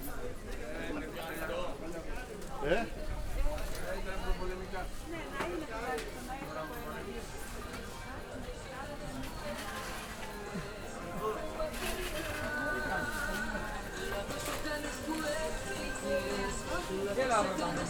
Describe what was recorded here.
market day at Kallidromiou street, a friendly place, fruit and food sellers sind sometimes and communicate accross their stands. Short walk along the market course. (Sony PCM D50, DPA4060)